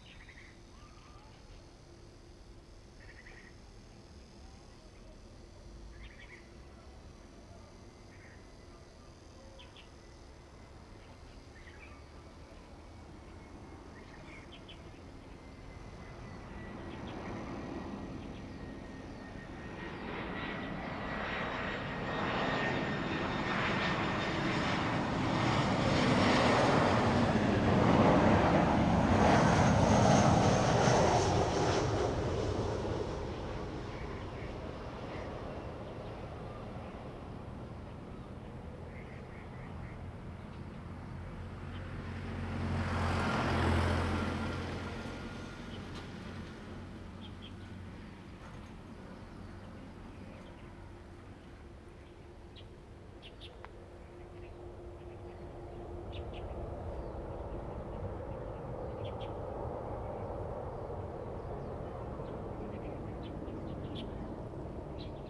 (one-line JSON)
{"title": "中華路, Dayuan Dist., Taoyuan City - The plane flew through", "date": "2017-08-18 15:47:00", "description": "Landing, The plane flew through, traffic sound, birds sound\nZoom h2n MS+XY", "latitude": "25.07", "longitude": "121.21", "altitude": "24", "timezone": "Asia/Taipei"}